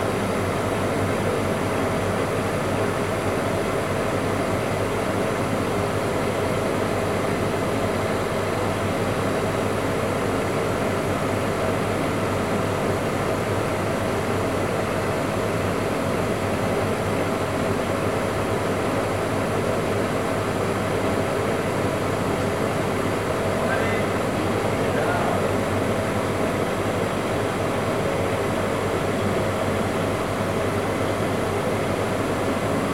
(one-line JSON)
{"title": "Place Victor Hugo, Toulouse, France - Cold Chamber", "date": "2021-05-02 11:12:00", "description": "Cold Chamber Motor Engine\nCaptation : Zoom H4n4", "latitude": "43.61", "longitude": "1.45", "altitude": "152", "timezone": "Europe/Paris"}